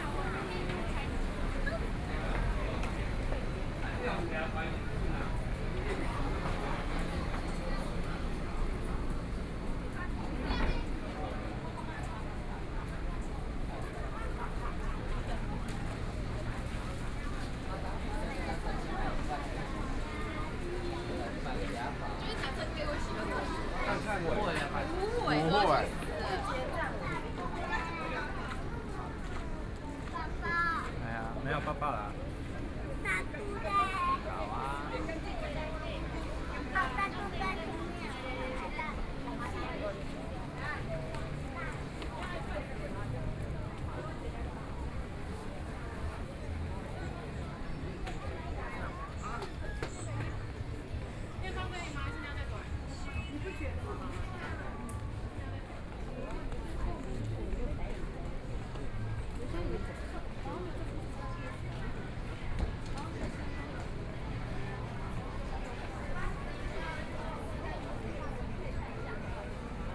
Walking through the Night Market, Traffic Sound, Tourist, Various shops voices
Sony PCM D50+ Soundman OKM II